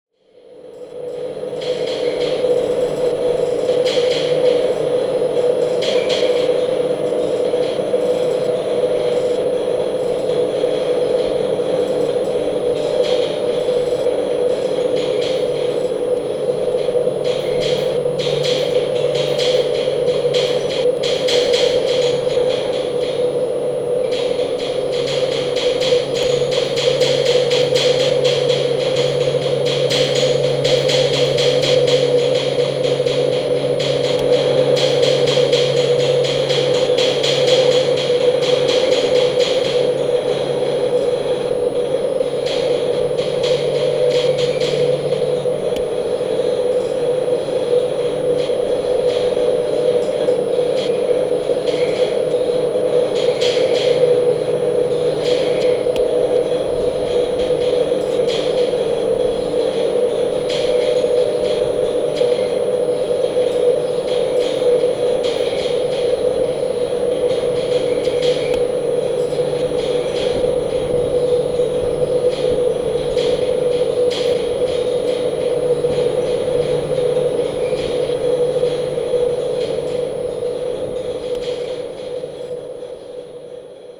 Interior Windmill, Zoom H6 with piezo…